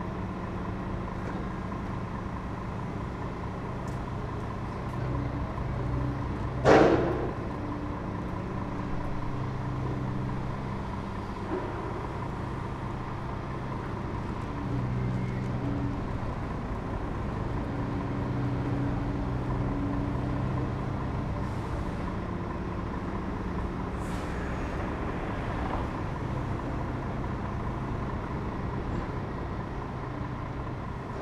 berlin: friedelstraße - the city, the country & me: sewer works
vibrating tamper, excavator loads a truck
the city, the country & me: december 5, 2013